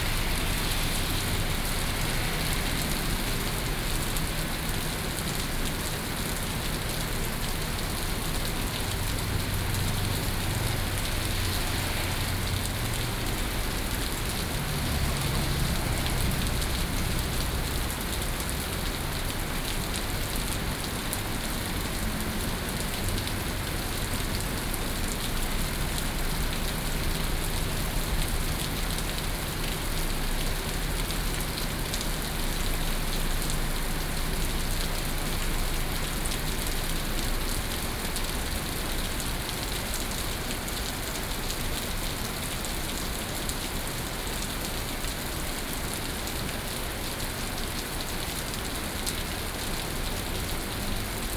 Wolong St., Da'an Dist. - Heavy rain
Heavy rain
Binaural recordings
Sony PCM D100+ Soundman OKM II
Taipei City, Taiwan, 4 July 2015, ~18:00